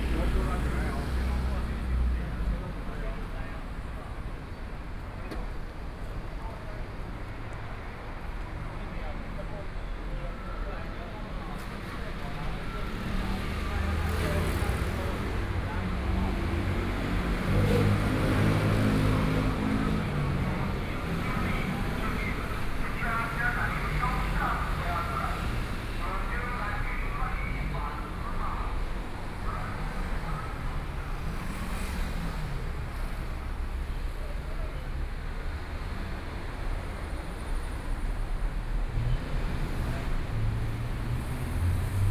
Jilin Rd., Taipei City - on the Road
walking on the road, Environmental sounds, Traffic Sound, Walking towards the north direction
Taipei City, Taiwan, 3 April 2014